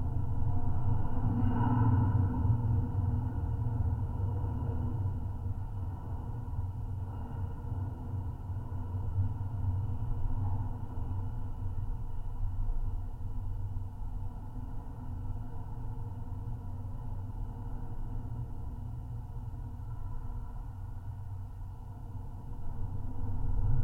Geophone recording from fence along Kal-Haven Trail
Van Buren County, Michigan, United States, July 2022